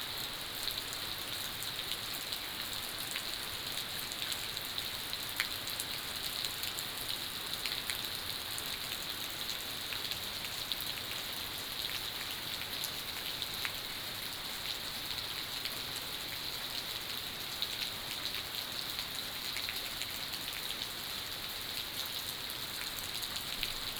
青蛙ㄚ 婆的家, Puli Township - Rainy Day
Early morning, Rainy Day
30 April 2015, ~05:00